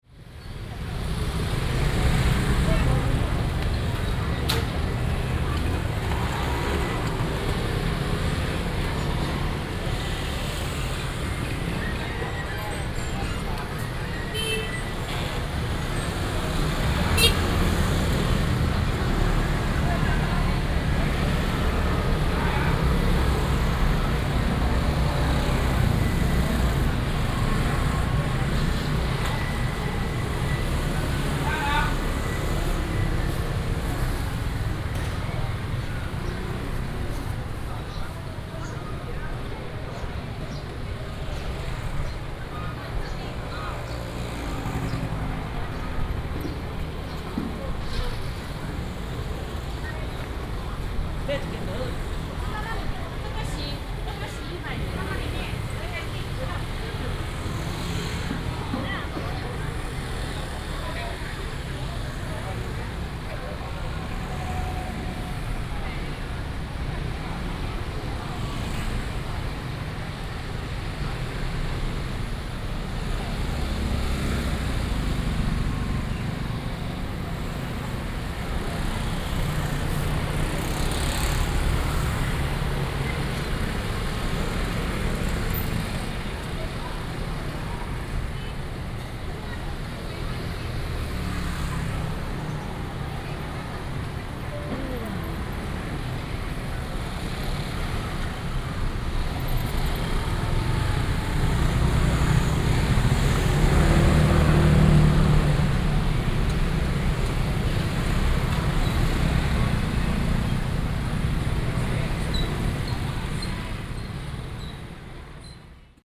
Sec., Yuanji Rd., Ershui Township - in the traditional market area
Walking in the traditional market area, lunar New Year, Traffic sound, Bird sounds
Binaural recordings, Sony PCM D100+ Soundman OKM II